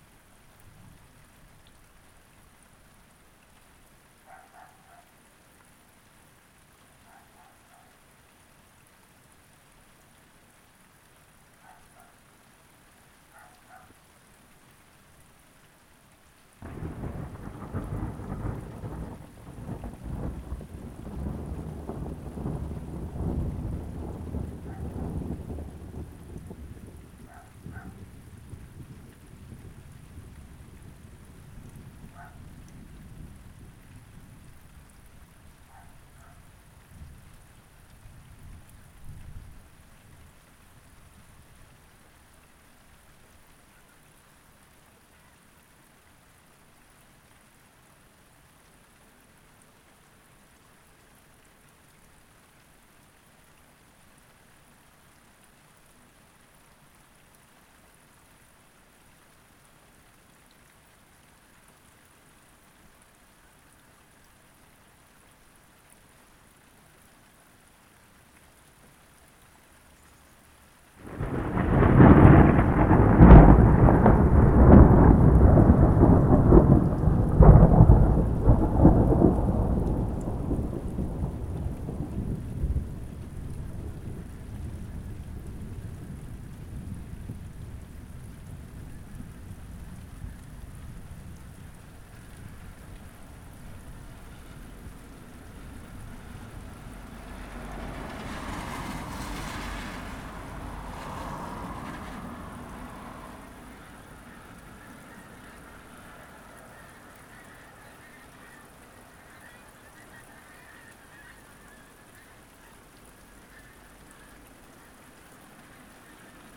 passing thunderstorm ... passing geese ... Olympus LS 12 integral mics ... balanced on window frame ... pink-footed geese very distant at 02.40 - 04.20 ... passing traffic etc ...
Yorkshire and the Humber, England, United Kingdom, 24 September